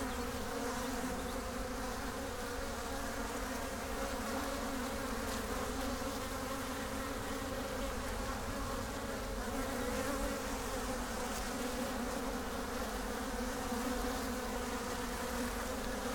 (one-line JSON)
{"title": "Whitestone, Exeter - Merrymeet Bees", "date": "2017-05-02 17:12:00", "description": "My mum (103 yrs on May 19th) has always loved bees. Dad, when he was alive used to have 3 or 4 hives. Just recently a friend has introduced a hive in Mum's front garden, close to an apple tree and virginia creeper. She loves it. The honey is gorgeous. Recorded using a home made SASS rig based on 2 Primo EM 172 capsules to Olympus LS14 placed about 10 cms away, off set to rhs at the level of the landing stage . An overcast but mild day with a light breeze. What a frenetic and wonderful sound.", "latitude": "50.73", "longitude": "-3.60", "altitude": "140", "timezone": "Europe/London"}